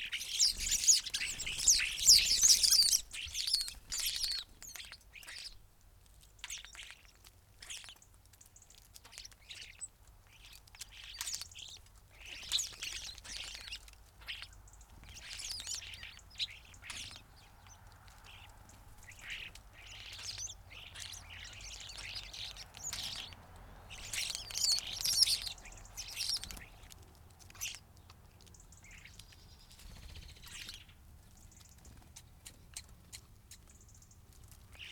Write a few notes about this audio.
starlings on bird feeders ... open lavalier mic clipped to bush ... mono recording ... bird calls from ... greenfinch ... blackbird ... collared dove ... dunnock ... some background noise ...